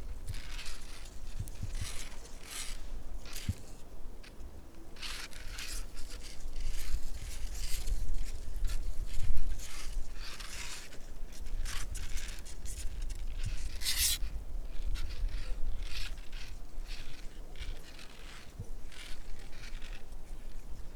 Lithuania, Utena, reed leaves
dried reed leaves rubbing one to another